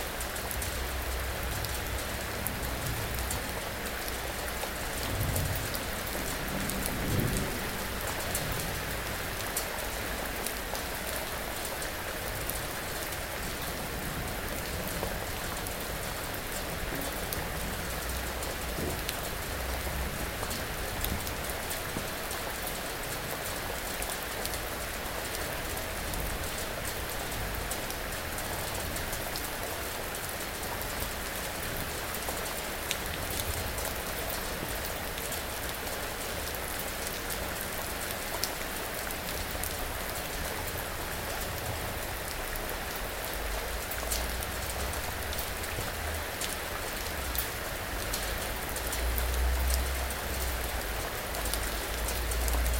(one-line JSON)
{"title": "hard rain, st. gallen", "description": "heavy rain on leaves, terrace, metal table. recorded aug 15th, 2008.", "latitude": "47.43", "longitude": "9.40", "altitude": "691", "timezone": "GMT+1"}